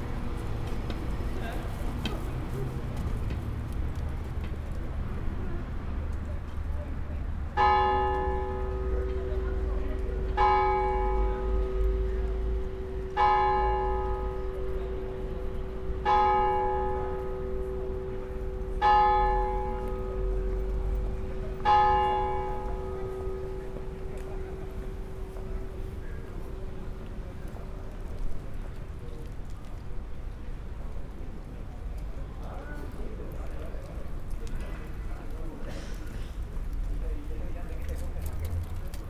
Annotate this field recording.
This is the sound of the Town Hall Clock striking six. I was walking home after a day of recording in Oxford, and I noticed that there was a little time to set up microphones ahead of the hour striking. I attached two omni-directional microphones to a bicycle frame with velcro, and settled in to listen to my town. This area is pedestrianised, but there is a fairly large bus route passing through to the side of it... so you can hear the buses and taxis, but lots of lovely bikes as well, and people walking, and the festive feeling and laughter at the end of the working day in the town, in summer.